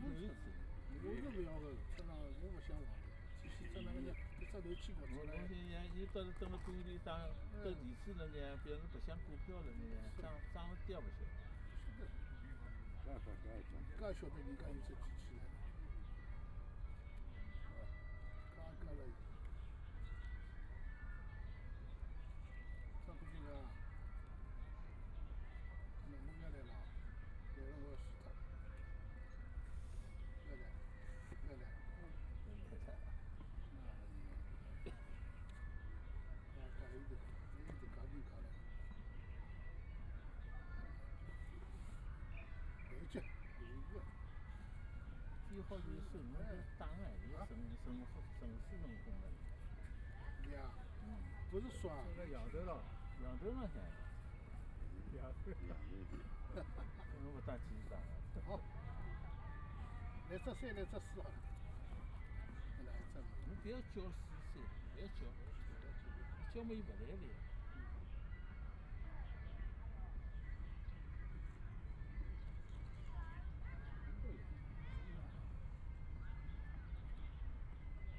Yangpu Park - Play cards
A group of middle-aged man playing cards, Binaural recording, Zoom H6+ Soundman OKM II